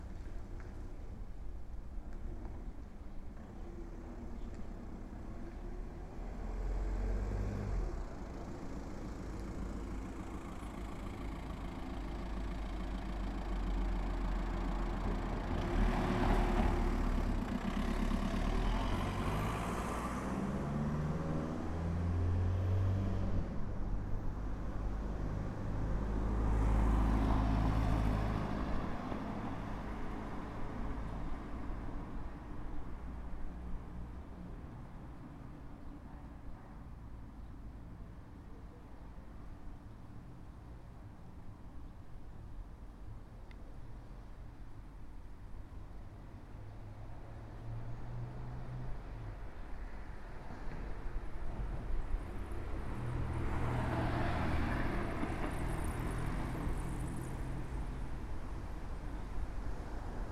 Jezdarska ul., Puškinova ul., Maribor, Slovenia - corners for one minute
one minute for this corner - jezdarska ulica and puškinova ulica
8 August 2012, 15:30